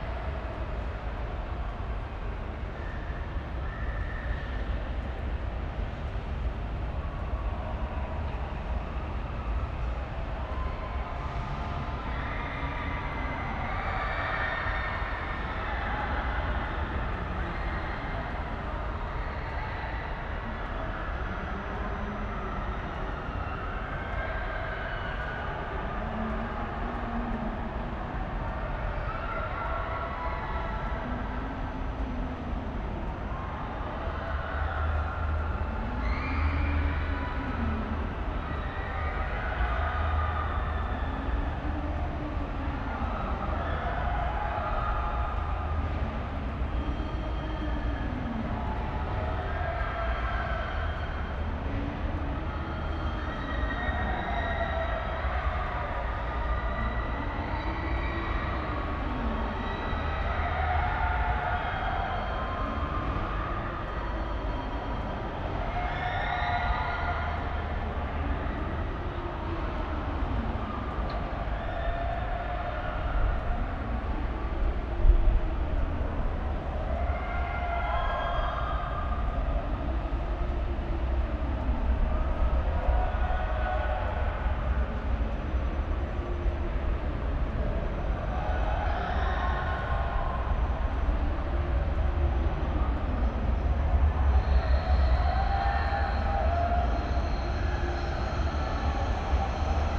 Berlin, Littenstr., courtyard
Berlin, Littenstr. courtyard, sounds and echos of the christmas fun fair vis-a-vis.
(tech note: SD702, NT1a A-B 60cm)
Berlin, Germany, 22 December 2011